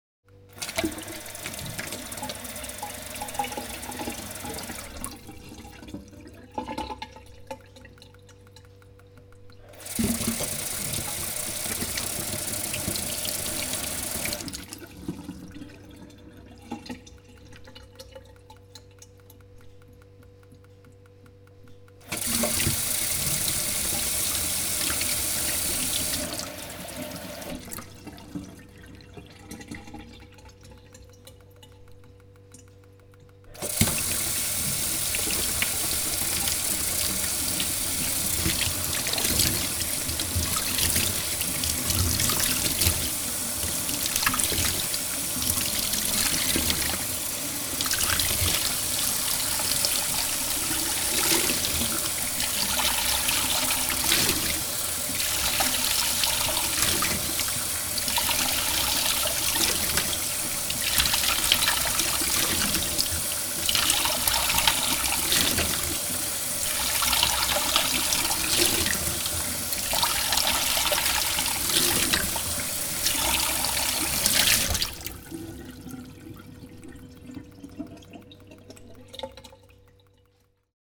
Maribor, Slovenia, Slomškov trg - Kitchen sink jam
"Playing" the kitchen sink - pouring the water out of the tap, etc.